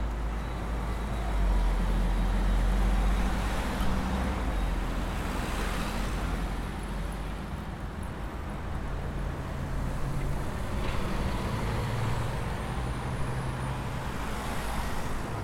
Calle Bertrand Russell, Madrid, España - North access
Voices and steps of people who come talking. There is heavy traffic on the road, as well as at the entry to university. Mainly are cars coming in, but on the road there are also trucks and vans; also intercity bus.one bus leaves the university and takes the road. Sound of steeps getting closer. Two girls are chatting. The cars continue coming in to the University regularly. There is fluid traffic on the road.
Recorded with a Zoom H4n
Madrid, Spain, 10 December 2018